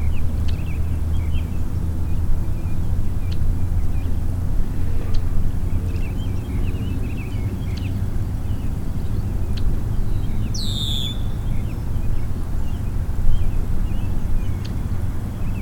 East Bay Park, Traverse City, MI, USA - Water's Edge (East Bay Park)
Facing East Arm, Grand Traverse Bay. Small waterfowl in the distance; one passes closely. Red-winged blackbird at right, middle-distance. Recorded about three feet from the water, while atop a platform made from wooden pallets. Recorded on a Tuesday following Memorial Day weekend. Stereo mic (Audio-Technica, AT-822), recorded via Sony MD (MZ-NF810).
June 2014